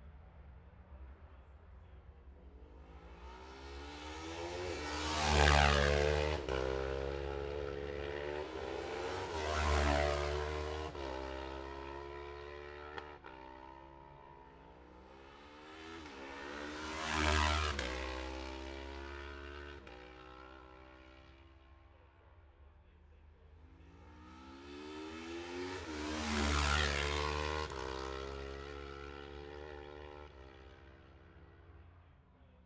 Jacksons Ln, Scarborough, UK - olivers mount road racing ... 2021 ...
bob smith spring cup ... twins group B ... dpa 4060s to MixPre3 ...